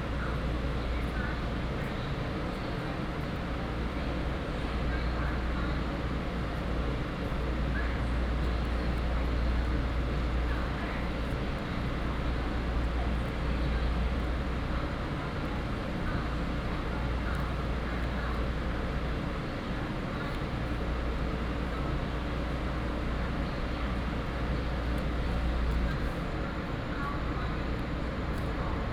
Yilan Station, Yilan City - At the station platform
Station Message Broadcast, At the station platform